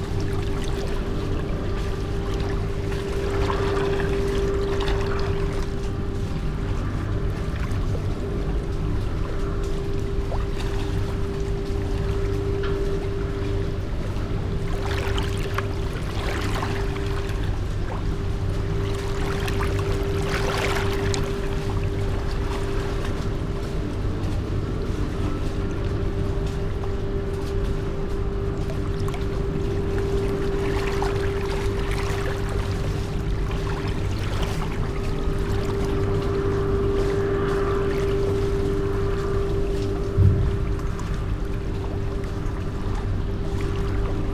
Plänterwald, Berlin, Germany - where water can smoothly overflow
river Spree and her free flowing waves over concrete surface, cement factory working, wind in high trees
Sonopoetic paths Berlin